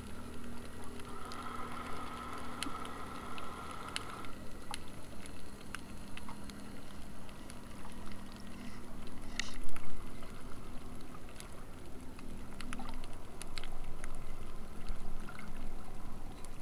Underwater Kakan, Croatia - (791 HY) Cthulhu at Kakan
Underwater recording (3m deep) where distant engines and mechanical friction sound like the famous creature from Lovecraft's Universum.
Recorded with Cold Gold Hydrophone on Tascam DR 100 MK3.